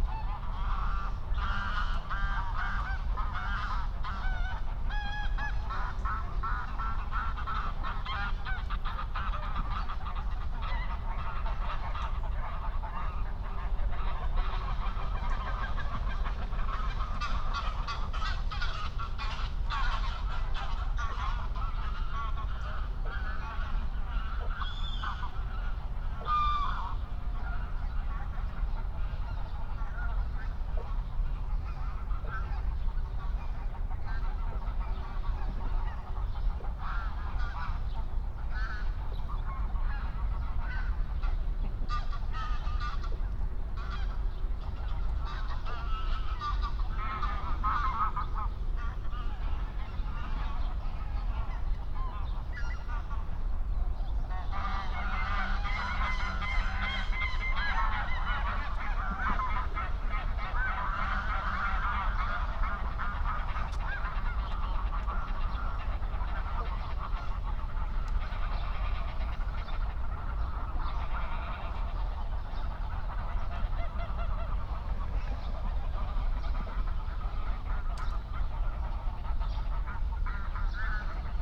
07:00 Berlin, Buch, Moorlinse - pond, wetland ambience